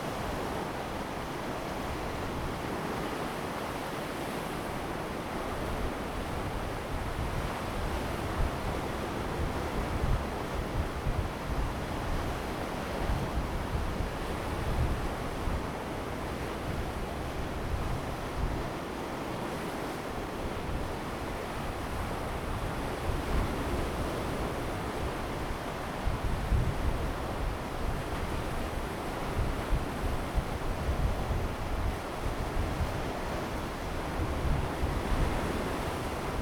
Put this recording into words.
On Haiti, birds sound, wind, the sea washes the shore, Zoom H2n MS+XY